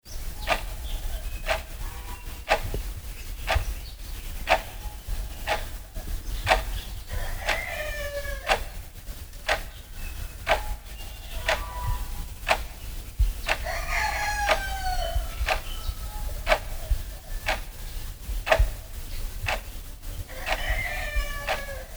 Shueilin Township, Yunlin - Clock
Clock, Rode NT4+Zoom H4n
雲林縣(Yunlin County), 中華民國, 14 January 2012, 06:45